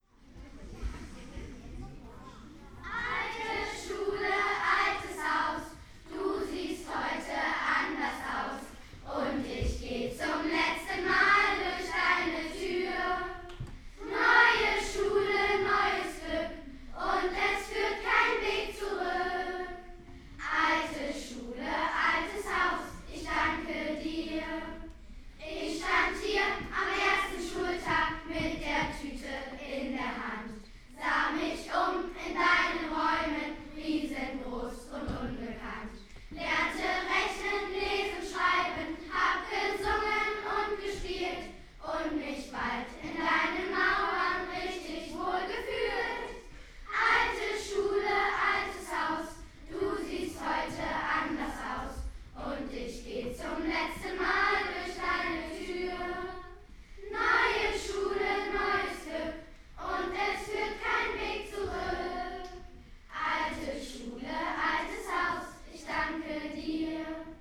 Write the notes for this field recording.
farewell song, primary school has finished after 4 years. (Sony PCM D50, Primo EM172)